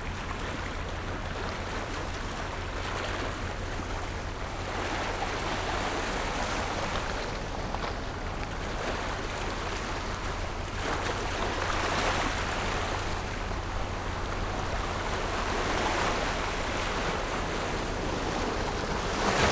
Wetlands, Tide
Zoom H6 +Rode NT4
福建省, Mainland - Taiwan Border, 14 October